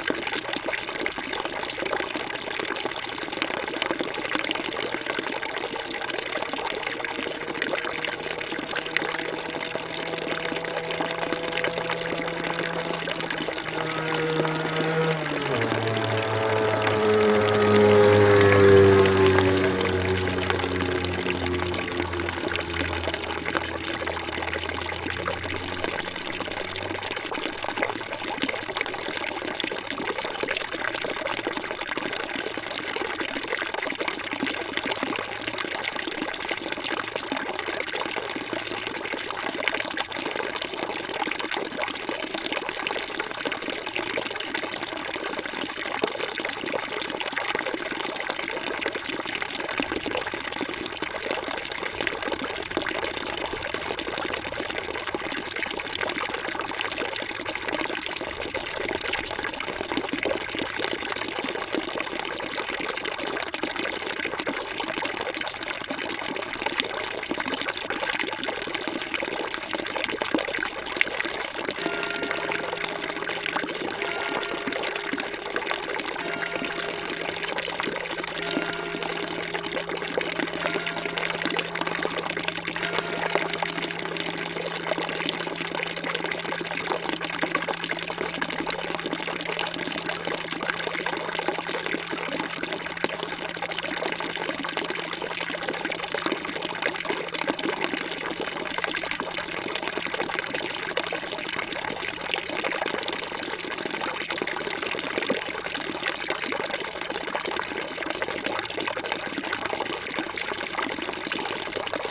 {"title": "Rue de l'Église, Chindrieux, France - Fontaine", "date": "2022-08-14 17:58:00", "description": "La fontaine et son bassin de 1870 en face de l'église de Chindrieux, sonnerie du clocher à 18h, l'orage commence à gronder, passage d'un 50cc qui peine dans a côte...", "latitude": "45.82", "longitude": "5.85", "altitude": "310", "timezone": "Europe/Paris"}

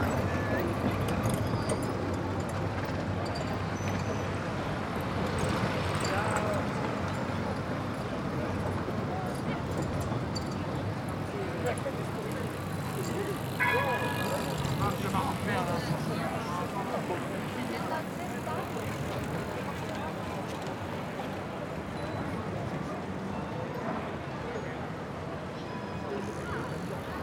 {"title": "Place Stéphanie, Bruxelles, Belgique - Car free day - journée sans voitures", "date": "2021-09-19 13:40:00", "description": "Trams, bikers, skaters.\nTech Note : Sony PCM-D100 internal microphones, wide position.", "latitude": "50.83", "longitude": "4.36", "altitude": "73", "timezone": "Europe/Brussels"}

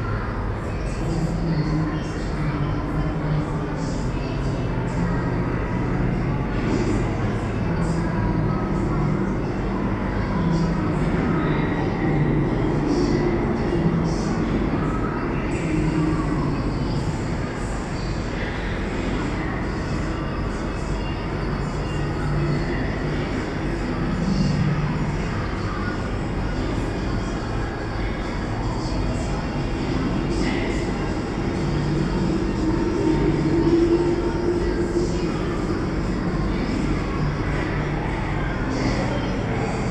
{"title": "Oberkassel, Düsseldorf, Deutschland - Düsseldorf, Stoschek Collection, video installation", "date": "2012-11-22 11:30:00", "description": "At the ground floor of the exhibition hall inside the left side of the hall. The sound of a three parted video installation. Recorded during the exhibition numer six - flaming creatures.\nThis recording is part of the exhibition project - sonic states\nsoundmap nrw - topographic field recordings, social ambiences and art places", "latitude": "51.23", "longitude": "6.74", "altitude": "40", "timezone": "Europe/Berlin"}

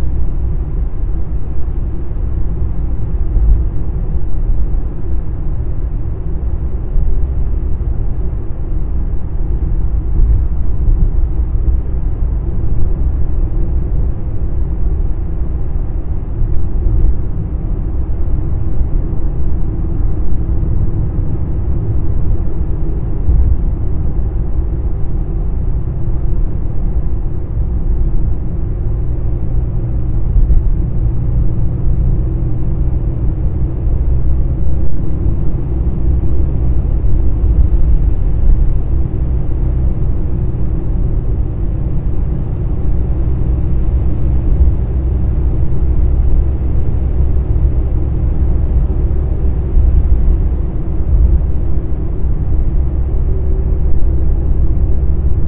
{"date": "2010-04-25 11:45:00", "description": "Travelling through the Dartford Tunnel, under the River Thames, in a Peugeot 206.", "latitude": "51.47", "longitude": "0.26", "timezone": "Europe/London"}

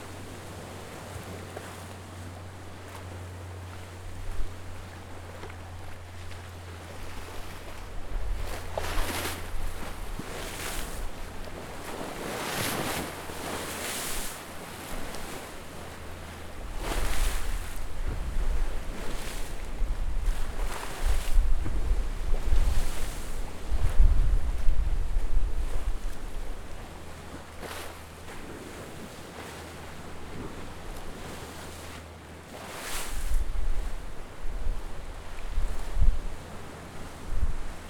{"title": "Lakeshore Ave, Toronto, ON, Canada - Waves on breakwater", "date": "2019-08-08 15:44:00", "description": "Waves crashing against a concrete breakwater.", "latitude": "43.62", "longitude": "-79.37", "timezone": "GMT+1"}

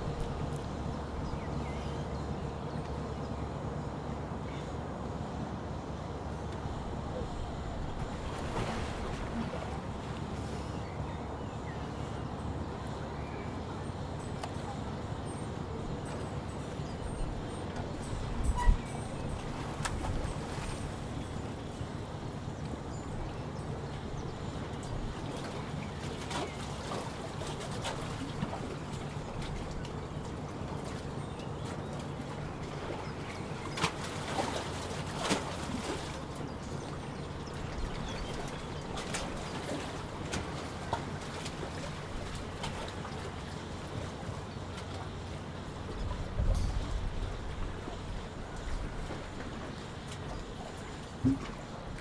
{"title": "узвіз Бузький, Вінниця, Вінницька область, Україна - Alley12,7sound20baseDynamoboat", "date": "2020-06-27 13:33:00", "description": "Ukraine / Vinnytsia / project Alley 12,7 / sound #20 / base Dynamo - boat", "latitude": "49.22", "longitude": "28.47", "altitude": "236", "timezone": "Europe/Kiev"}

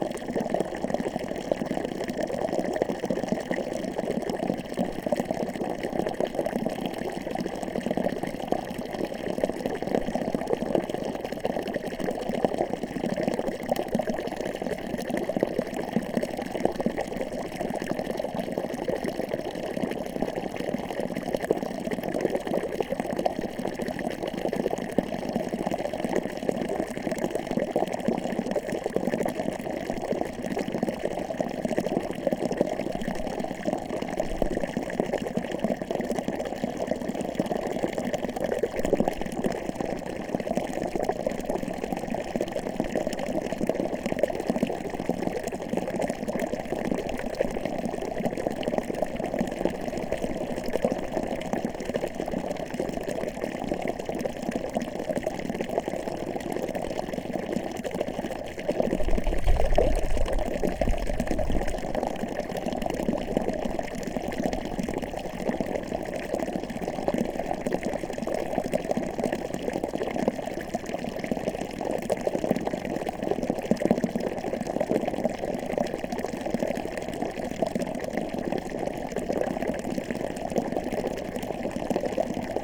{"title": "church, migojnice, slovenija - water spring", "date": "2014-02-15 23:38:00", "description": "full moon, night time, strong wind, from within glass cup, attached to pipe of the water spring", "latitude": "46.23", "longitude": "15.17", "timezone": "Europe/Ljubljana"}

